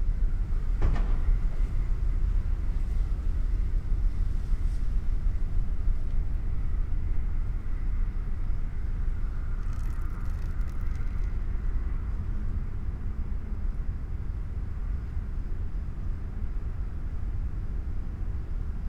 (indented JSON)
{
  "title": "Punto Franco Nord, house, Trieste, Italy - night ambience",
  "date": "2013-09-10 00:30:00",
  "description": "dry leaf, slowly sliding with night winds ...",
  "latitude": "45.67",
  "longitude": "13.76",
  "altitude": "6",
  "timezone": "Europe/Rome"
}